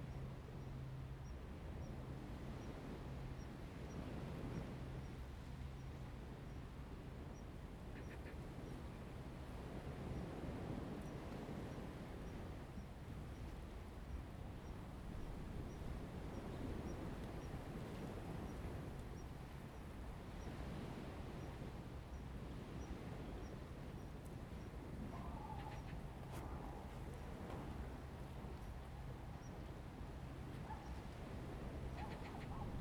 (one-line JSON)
{"title": "湖井頭, Lieyu Township - Birds singing and waves", "date": "2014-11-04 10:03:00", "description": "At the beach, Sound of the waves, Birds singing\nZoom H2n MS +XY", "latitude": "24.44", "longitude": "118.23", "timezone": "Asia/Shanghai"}